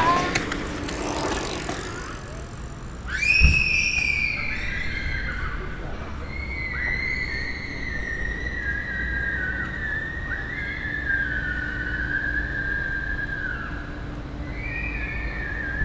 {"title": "Rue du Vieux Village, Duingt, France - Piste cyclable Duingt", "date": "2022-08-16 15:54:00", "description": "Au bord de la piste cyclable à Duingt près du lac d'Annecy, beaucoup de cyclistes de toute sorte, bruits ambiants de ce lieu très touristique.", "latitude": "45.83", "longitude": "6.20", "altitude": "475", "timezone": "Europe/Paris"}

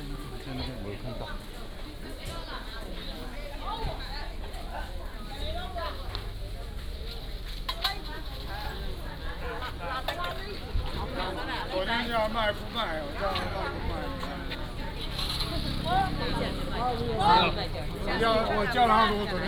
{"title": "介壽獅子市場, Nangan Township - Walking through the market", "date": "2014-10-15 06:51:00", "description": "Walking through the market, Traffic Sound", "latitude": "26.16", "longitude": "119.95", "altitude": "11", "timezone": "Asia/Taipei"}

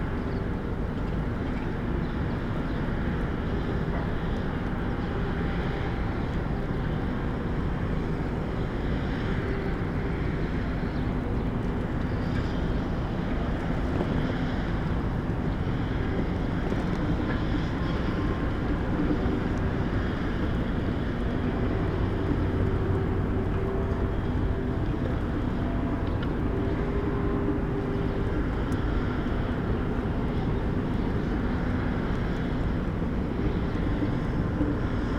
{"title": "berlin, aronstraße: kleingartenkolonie friedenstal, hauptweg - A100 - bauabschnitt 16 / federal motorway 100 - construction section 16: allotment", "date": "2015-04-16 16:51:00", "description": "windblown tarp, different excavators, bulldozers and trucks during earthwork operations\napril 16, 2015", "latitude": "52.48", "longitude": "13.46", "altitude": "32", "timezone": "Europe/Berlin"}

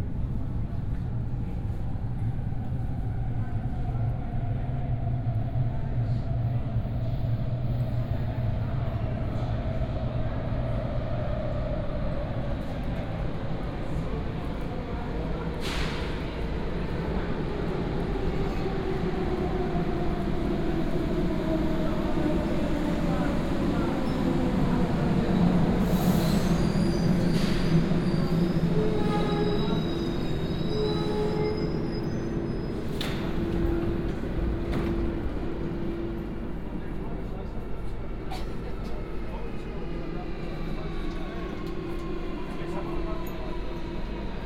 {"title": "Neos Kosmos-Acropoli, Athens, Greece - (528) Metro ride from Neos-Kosmos to Acropoli", "date": "2019-03-09 16:22:00", "description": "Binaural recording of ride from Neos-Kosmos to Acropoli with M2 line.\nRecorded with Soundman OKM + Sony D100", "latitude": "37.96", "longitude": "23.73", "altitude": "72", "timezone": "Europe/Athens"}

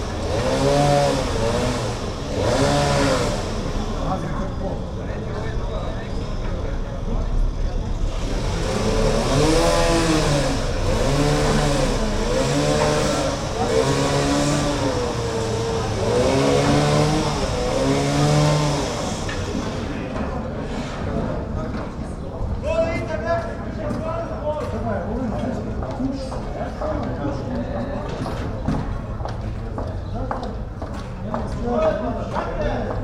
{"title": "Ptuj, Slovenia - ptuj main square", "date": "2012-06-19 11:30:00", "description": "recorded from the steps of the town theatre, amongst many outdoor cafes, and continuing construction", "latitude": "46.42", "longitude": "15.87", "altitude": "232", "timezone": "Europe/Ljubljana"}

